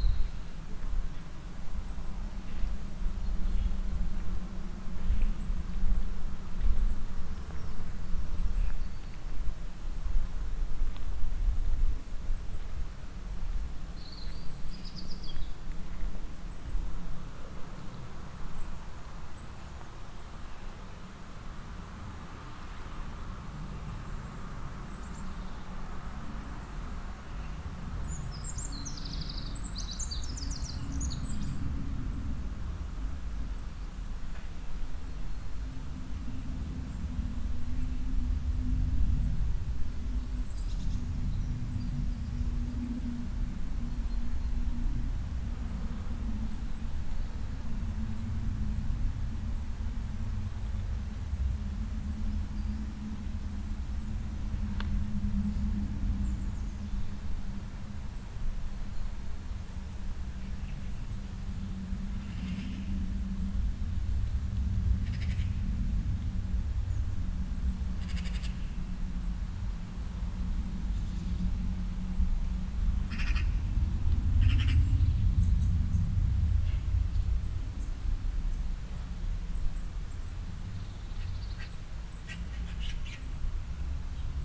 vianden, camping place, morning atmo
In the early morning at the camping place. Silent voices from behind the thin tent walls, a little wind, birds and the deep resonant bass of some traffic.
Vianden, Campingplatz, Morgenstimmung
Am frühen Morgen auf dem Campingplatz. Leise Stimmen hinter den Zeltwänden, ein kleiner Wind, Vögel und der tiefe Bass von etwas Verkehr.
Vianden, terrain de camping, ambiance matinale
Tôt le matin sur le terrain de camping. Des voix discrètes derrière la fine toile des tentes, un petit vent, des oiseaux et le bruit sourd du trafic routier.
Project - Klangraum Our - topographic field recordings, sound objects and social ambiences